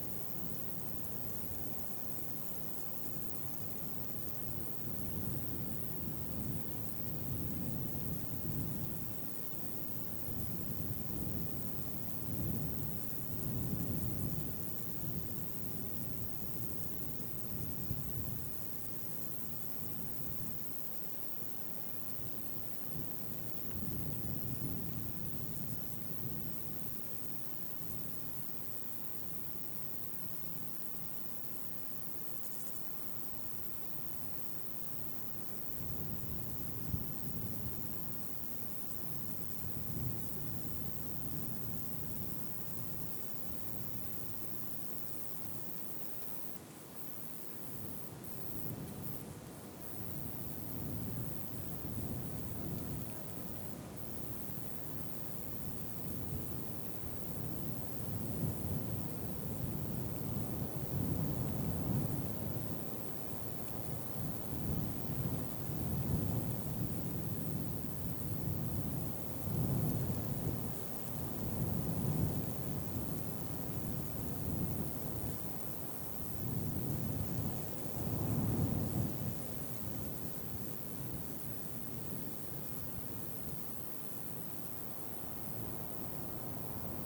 Wolbrom, Polska - insects
Zoom H4N, recording of insects in the grass.